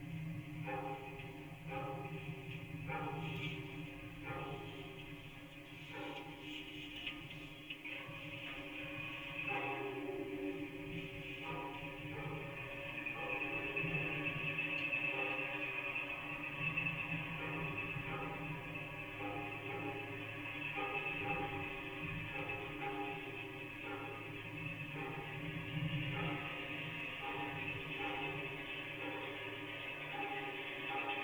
Sabaldauskai, Lithuania, an empty tank
big empty metallic tank found in the meadow...the metal catches distant dog's bark